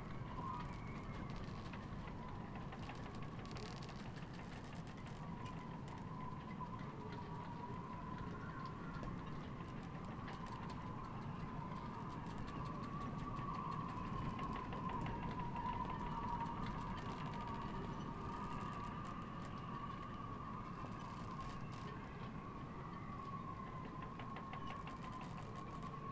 workum, het zool: marina, berth h - the city, the country & me: marina, aboard a sailing yacht
creaking ropes, wind flaps the tarp
the city, the country & me: july 24, 2009
Workum, The Netherlands, 24 July 2009, 02:07